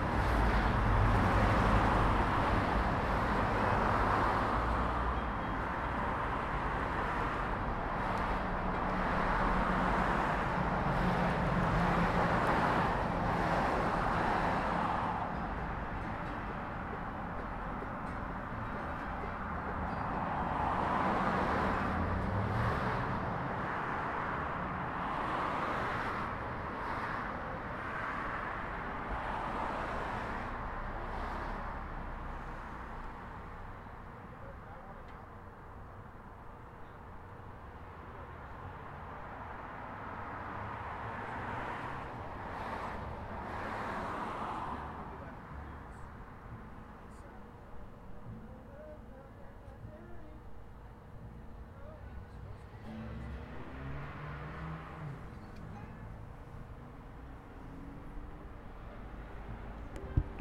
Uintah Street, Colorado Springs, CO, USA - Bluegrass and Traffic
Recorded from 315 Uintah. People were playing bluegrass across the street.